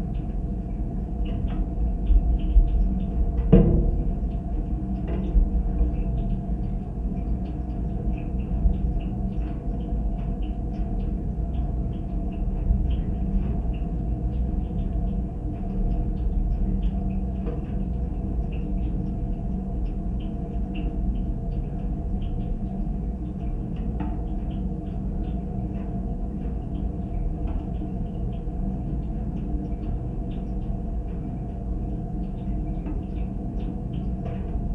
sunny day, snow is melting on the roof, geophone on rain pipe
Utenos apskritis, Lietuva